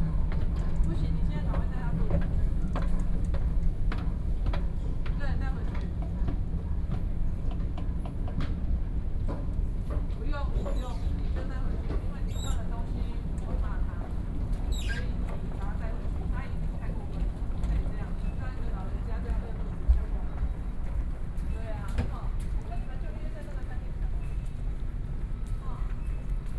New Taipei City, Taiwan - In the train station platform